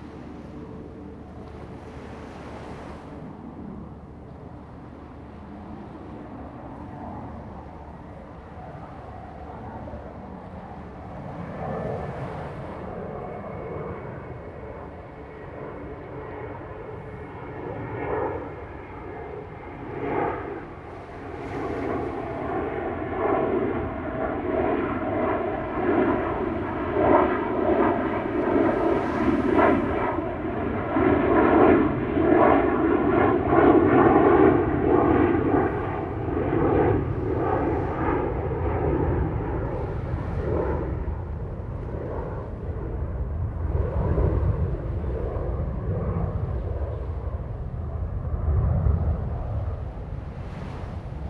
料羅海濱公園, Jinhu Township - At Waterfront Park
At Waterfront Park, At the beach, Sound of the waves
Zoom H2n MS+XY